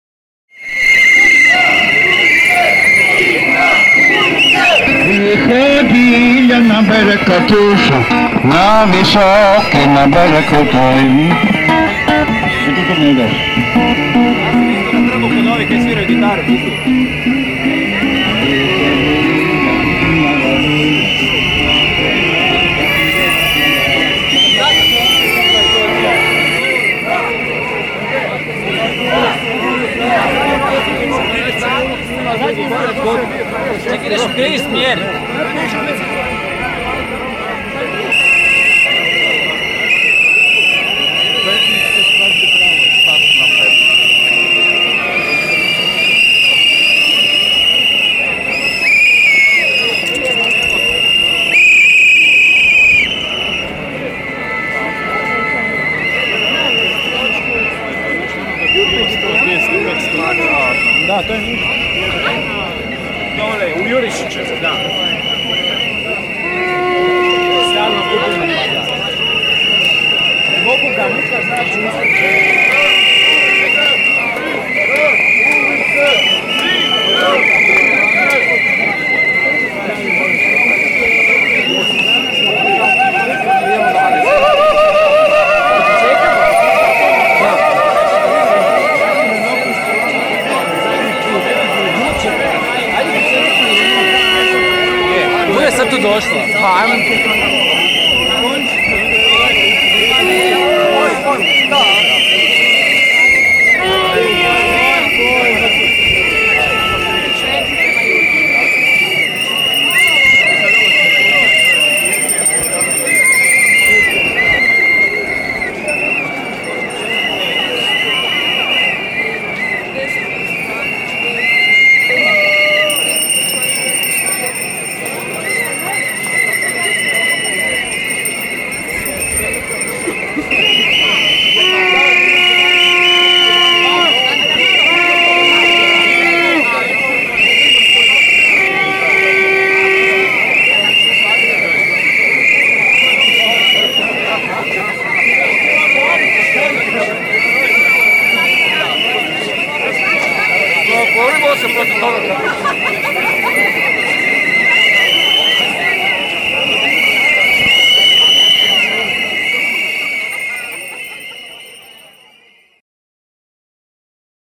Protests in Zagreb, 3 March (3) - up to 10000 protesters
shouting and whistling, passing by a street singer and crossing Jelacic's square